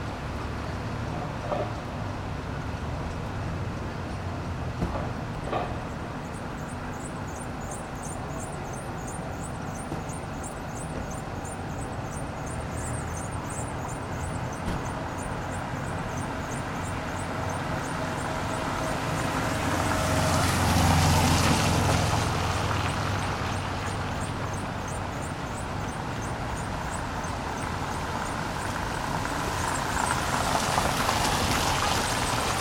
Cars driving across the wet bricked road surface of the Circle at the center of downtown Indianapolis.